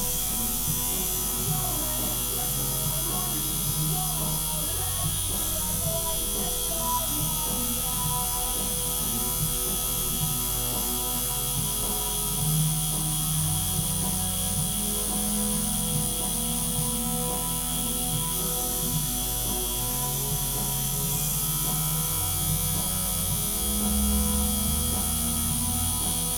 {
  "title": "Prospect Rd, Scarborough, UK - having a tattoo ...",
  "date": "2022-09-13 10:20:00",
  "description": "having a tattoo ... tough love tattoo studio ... preliminaries ... tattooing ... discussion about after care ... dpa 4060s clipped to bag to zoom h5 ... tattoo of midway atoll with a laysan albatross in full sky moo mode ... and two birds silhouette in flight ... and music ...",
  "latitude": "54.28",
  "longitude": "-0.41",
  "altitude": "54",
  "timezone": "Europe/London"
}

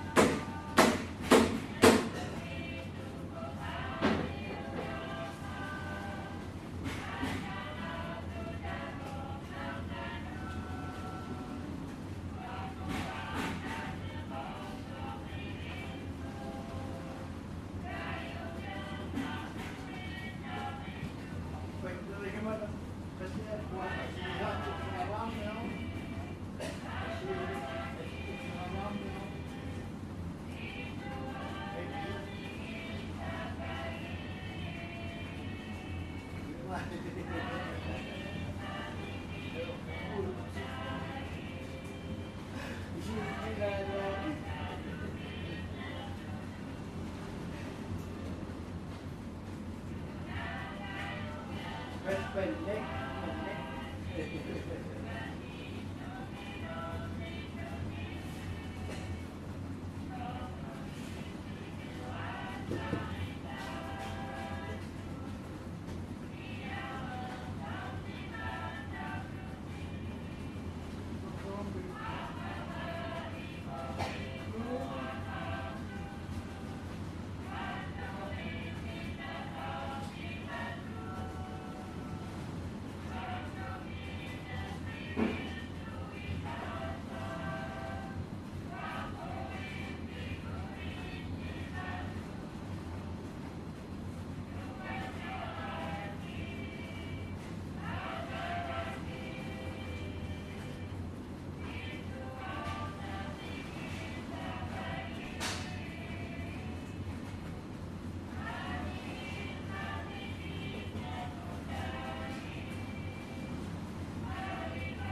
{
  "title": "Niaqornat, Grønland - Fish Factory",
  "date": "2013-06-18 08:30:00",
  "description": "The sounds of the small fish factory in Niaqornat. Recorded with a Zoom Q3HD with Dead Kitten wind shield.",
  "latitude": "70.79",
  "longitude": "-53.67",
  "altitude": "18",
  "timezone": "America/Godthab"
}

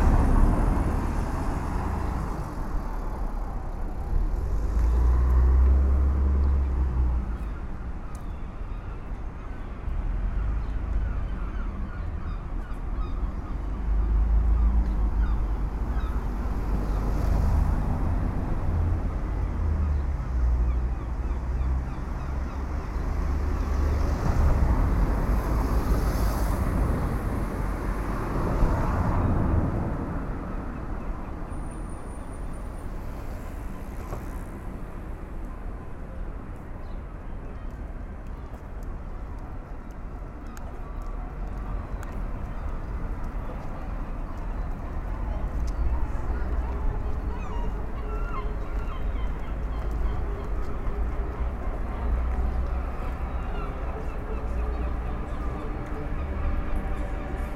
{
  "title": "Porto, Ponte S. Luis",
  "date": "2010-07-27 20:09:00",
  "description": "traffic, bycicle, gulls...",
  "latitude": "41.14",
  "longitude": "-8.61",
  "altitude": "1",
  "timezone": "Europe/Lisbon"
}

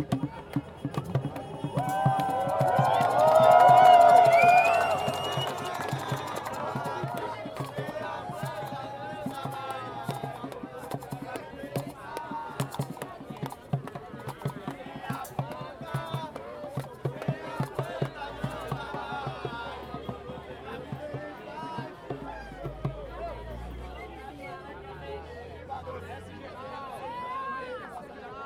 {
  "title": "Barra, Salvador - Bahia, Brazil - Marijuana March 3",
  "date": "2014-06-01 16:33:00",
  "description": "A peaceful legalise marijuana march in Salvador, Brazil.",
  "latitude": "-13.01",
  "longitude": "-38.52",
  "altitude": "14",
  "timezone": "America/Bahia"
}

